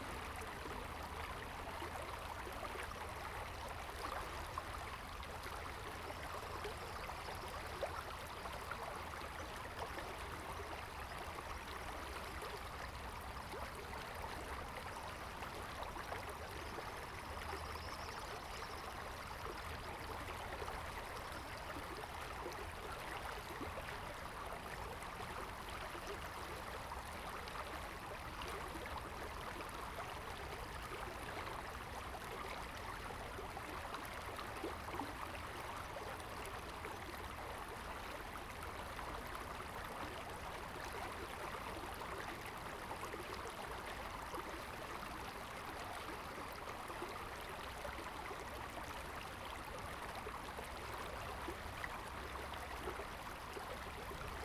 溪頭, 台東縣太麻里溪 - stream
stream, Beside the river
Zoom H2n MS+XY